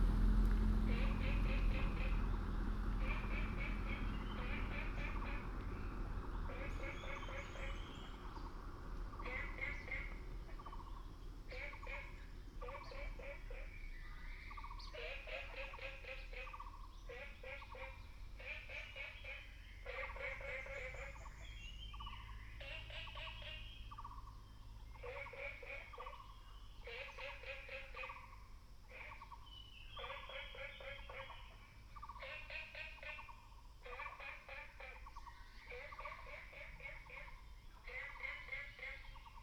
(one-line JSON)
{"title": "蓮華池藥用植物標本園, Yuchi Township - In the Plaza", "date": "2016-04-26 07:06:00", "description": "Frogs chirping, Birds singing", "latitude": "23.92", "longitude": "120.89", "altitude": "700", "timezone": "Asia/Taipei"}